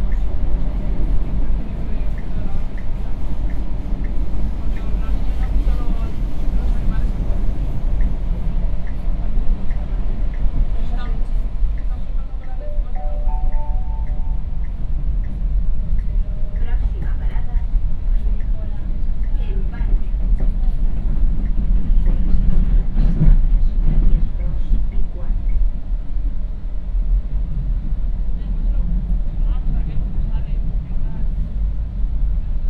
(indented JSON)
{
  "title": "Burjasot, Valencia, España - Metro",
  "date": "2015-04-08 01:14:00",
  "description": "Metro en Burjassot. Luhd binaural + Roland",
  "latitude": "39.50",
  "longitude": "-0.40",
  "altitude": "32",
  "timezone": "Europe/Madrid"
}